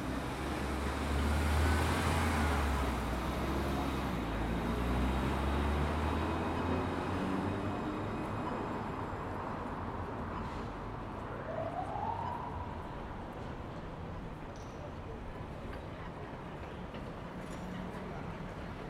North City, Dublin, Ireland - Saint Patrick's before parade
Sound walks around Dublin before and after the world wide known Saint Patrick's parade.
This first recording was taken two hour before Saint Patrick's parade the parade on the single day in which an unmotorized Dublin reveals a whole different soundscape experience
17 March, 10:20